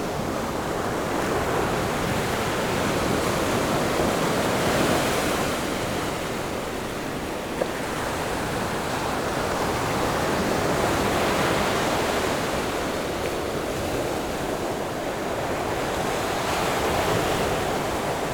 永鎮海濱公園, Jhuangwei Township - Sound of the waves
In the beach, Sound of the waves
Zoom H6 MS+ Rode NT4
Zhuangwei Township, Yilan County, Taiwan, 26 July, 15:40